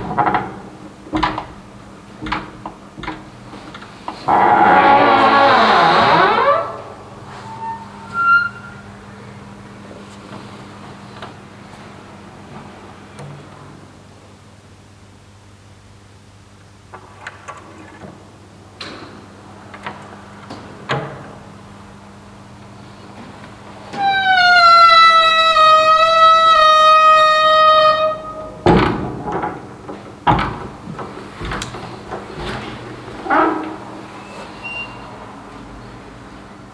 Bockenheim, Frankfurt am Main, Deutschland - frankfurt, fair, hall 9, singing door
Inside hall 9 on the studio floor. The sound of a door.
Frankfurt, Germany